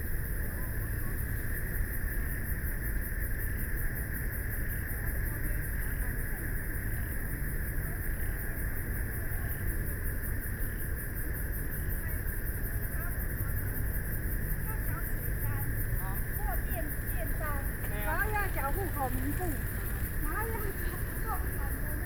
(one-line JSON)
{"title": "北投區關渡里, Taipei City - Environmental sounds", "date": "2014-03-18 20:10:00", "description": "Frogs sound, Traffic Sound, Environmental Noise, Bicycle Sound, Pedestrians walking and running through people\nBinaural recordings\nSony PCM D100+ Soundman OKM II SoundMap20140318-6)", "latitude": "25.12", "longitude": "121.46", "timezone": "Asia/Taipei"}